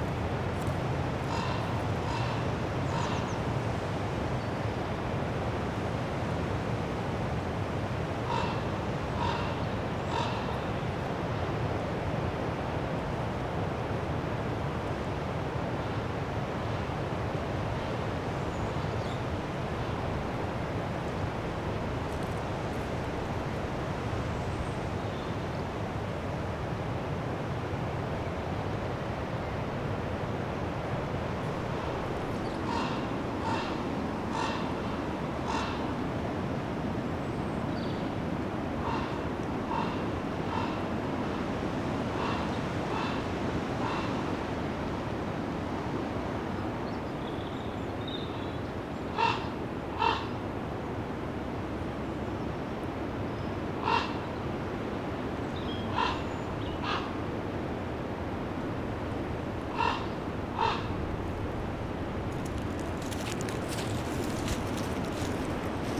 Pamphill, Dorset, UK - The spirit of King Arthur trapped in a Raven
I thought I was recording a Raven on Badbury Rings but a friend found a book recently that tells that King Arthurs Spirit was trapped in a raven there. If his spirit lives on, here it is captured in sound.